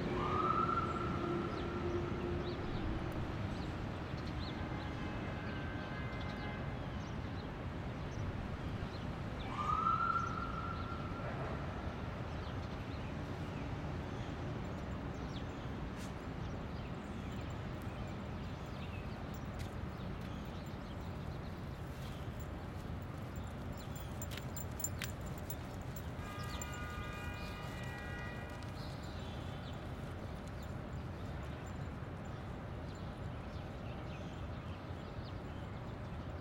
Nathan D Perlman Pl, New York, NY, USA - Stuyvesant Square Park
Calm Thursday morning on Stuyvesant Square Park.
United States, February 2022